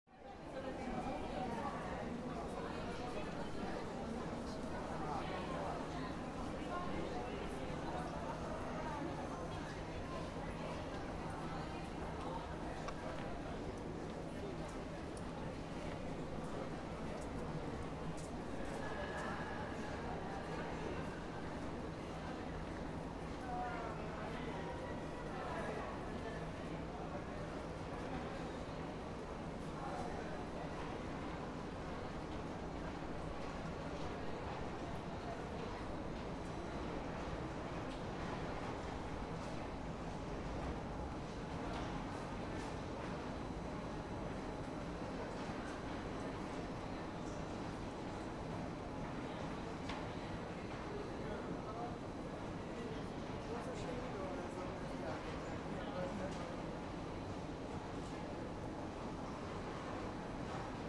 Deak Ferenc Ter Underground - deak ferenc ter minus one
People walking and talking on the minus one level of the metro station deak ferenc ter, from far the escalator and trains are audible. A lot of other stations are renewed, this one is still old.
Deák Ferenc tér, Hungary, 4 December, ~15:00